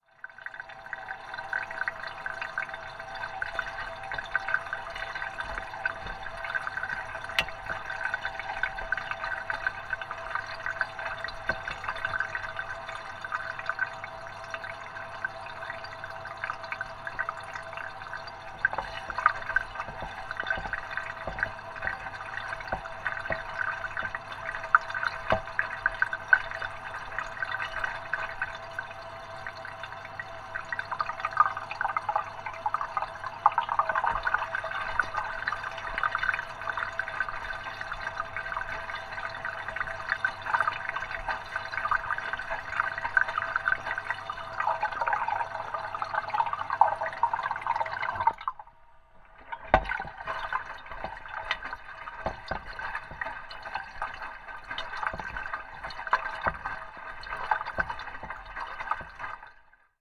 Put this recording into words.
water inflow, contact mic recording